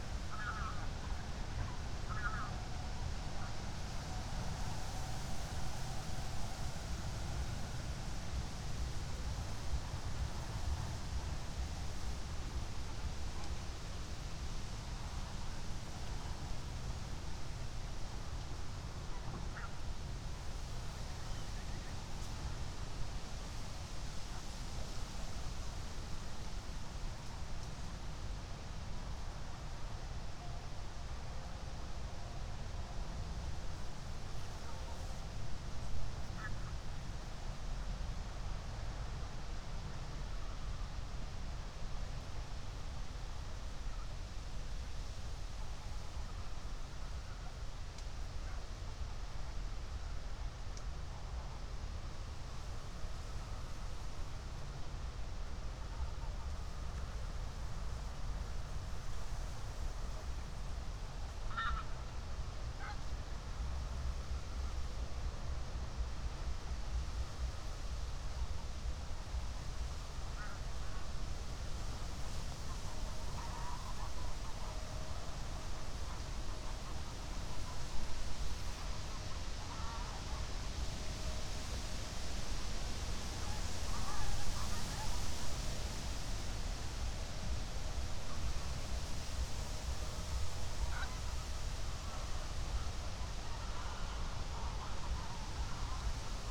{
  "date": "2021-11-06 21:04:00",
  "description": "21:04 Berlin, Buch, Moorlinse - pond, wetland ambience",
  "latitude": "52.63",
  "longitude": "13.49",
  "altitude": "51",
  "timezone": "Europe/Berlin"
}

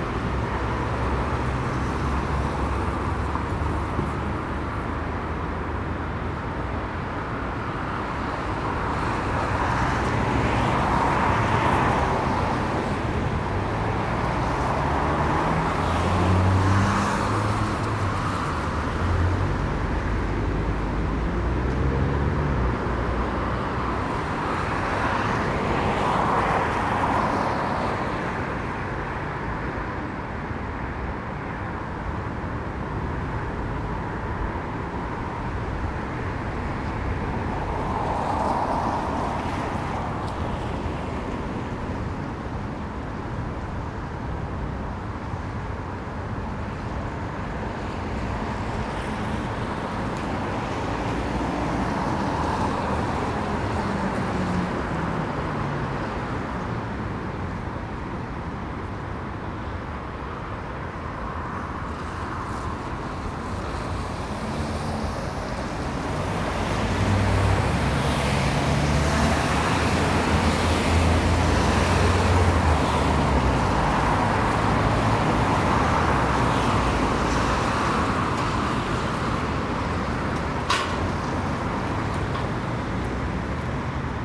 {
  "title": "Contención Island Day 31 outer southwest - Walking to the sounds of Contención Island Day 31 Thursday February 4th",
  "date": "2021-02-04 10:23:00",
  "description": "The Drive Moor Place Woodlands Oaklands Avenue Oaklands Grandstand Road Town Moor\nBy the gate\nthat sounds the runners passing by\nIn a puddle\ngrey leaves slowly turning to soil\nA gull performs its rain dance\ntricking worms to the surface\nTraffic",
  "latitude": "54.99",
  "longitude": "-1.63",
  "altitude": "75",
  "timezone": "Europe/London"
}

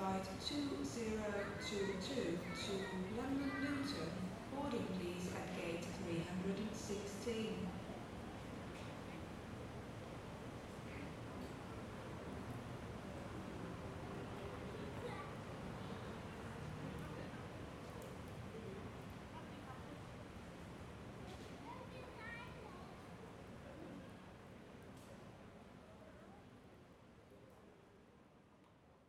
{
  "title": "Faro, Portugal - Faro - Portugal - Airport",
  "date": "2018-10-07 22:00:00",
  "description": "Faro - Portugal\nAéroport - ambiance hall d'embarquement.\nZoom H3VR",
  "latitude": "37.02",
  "longitude": "-7.97",
  "altitude": "8",
  "timezone": "Europe/Lisbon"
}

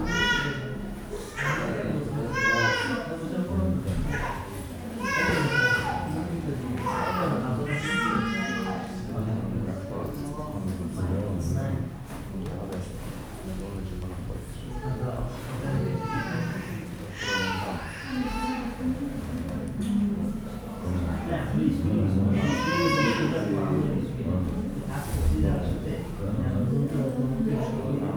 {
  "title": "Friedrich-Krause-Ufer, Berlin, Germany - Waiting – Ausländerbehörde (aliens registration office)",
  "date": "2019-11-21 11:45:00",
  "description": "Waiting to be registered as an alien. Unlike other recent bureaucratic waits in Berlin this takes place in newer building – still huge – with smaller waiting spaces but which are absolutely packed with people, families, children, young babies - many are Turkish but there are others from the world over – and not nearly enough chairs. A queue winds out of the door. The sound is the constant murmuring of subdued conversation, people changing places, shifting positions, greeting familiar faces. Your interview number is displayed only visually (no sound alerts) on a pearly white screen. It is the room's focus. There's nothing to do except wait, play with your phone and keep an eye on the slowly changing black and red numbers. Many are there all day. Some start at 4am. But I'm lucky and a privileged European; my number appears after only 25min.",
  "latitude": "52.54",
  "longitude": "13.36",
  "altitude": "34",
  "timezone": "Europe/Berlin"
}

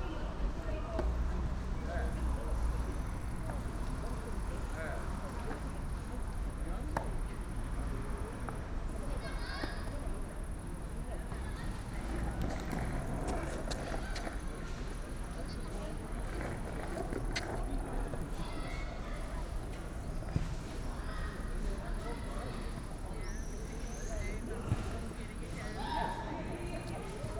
{
  "title": "Zickenplatz, Schönleinstraße, Berlin, Deutschland - public square evening ambience near playground",
  "date": "2021-07-06 20:40:00",
  "description": "evening ambience at Zickenplatz, Berlin Kreuzberg /w girl practising skateboard, someone makeing a phone call, youngsters playing streetball, bikes passing by, distant traffic and a cricket\n(Sony PCM D50, Primo EM272)",
  "latitude": "52.49",
  "longitude": "13.42",
  "altitude": "42",
  "timezone": "Europe/Berlin"
}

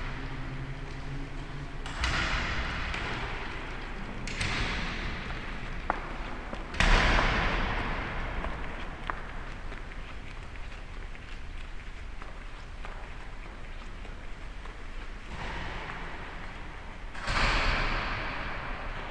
{
  "title": "Kapitol, Katedrala",
  "date": "2010-06-09 18:41:00",
  "description": "inside of the kathedral, after the celebration",
  "latitude": "45.81",
  "longitude": "15.98",
  "altitude": "145",
  "timezone": "Europe/Zagreb"
}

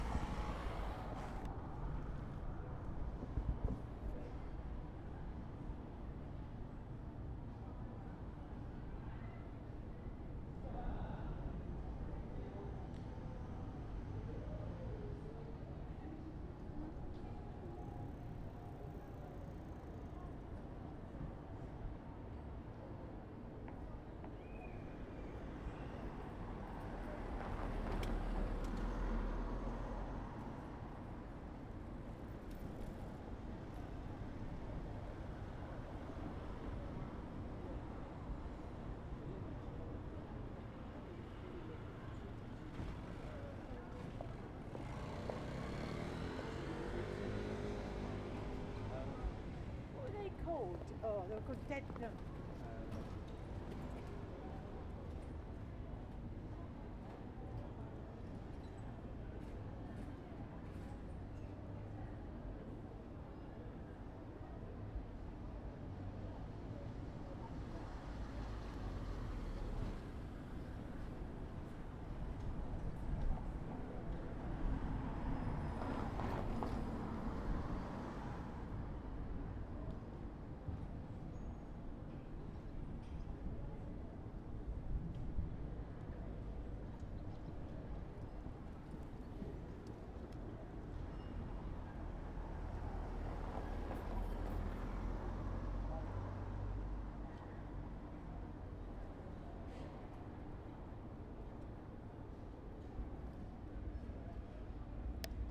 {
  "title": "London, UK - Arlington Road, Camden",
  "date": "2016-07-08 19:50:00",
  "description": "Standing outside a bingo hall on Arlington Road. Passing cars and people.",
  "latitude": "51.54",
  "longitude": "-0.14",
  "altitude": "33",
  "timezone": "Europe/London"
}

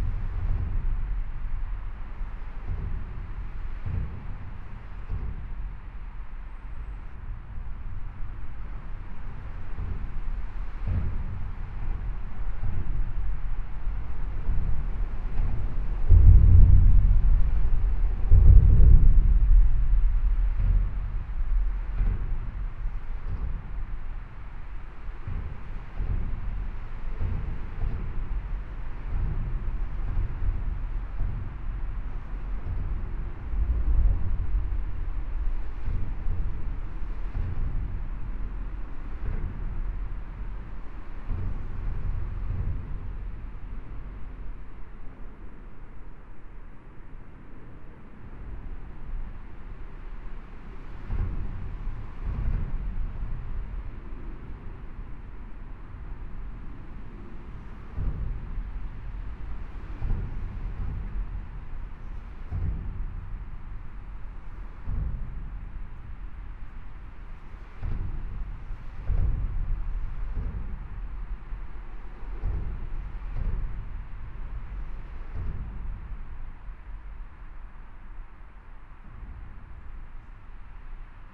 {
  "title": "Bruxelles, Belgium - Vilvoorde viaduct",
  "date": "2017-11-26 08:30:00",
  "description": "Below the Vilvoorde viaduct. Sound of the traffic. I'm dreaming to go inside and one day it will be true !",
  "latitude": "50.91",
  "longitude": "4.41",
  "altitude": "44",
  "timezone": "Europe/Brussels"
}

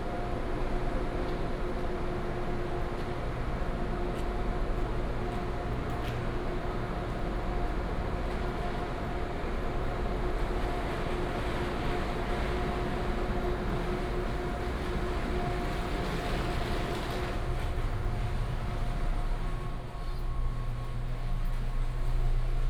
{
  "title": "彰化車站, Taiwan - walking in the Station",
  "date": "2017-03-03 08:23:00",
  "description": "From the station hall, Through the flyover, To the station platform, Train arrived",
  "latitude": "24.08",
  "longitude": "120.54",
  "altitude": "16",
  "timezone": "Asia/Taipei"
}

